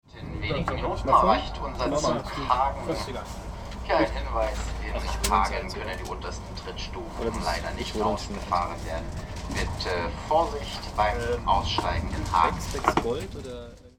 hagen, die unterste Trittstufe - trittstufe 4
14.01.2009 19:33 ICE Köln -> Berlin
Hauptbahnhof, Deutschland, 14 January 2009, 19:33